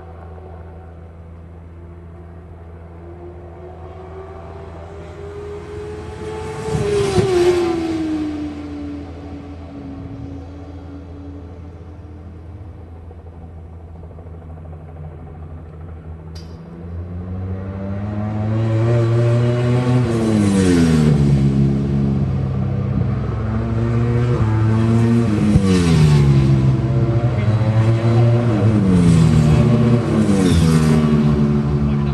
West Kingsdown, UK - World Superbikes 2002 ... Qual ...
World Superbikes 2002 ... Qual ... one point stereo mic to minidisk ...